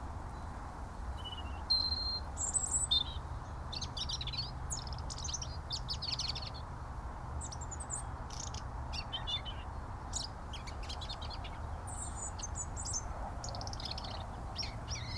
a robin against the distant white noise of the A303
14 January 2018, 14:30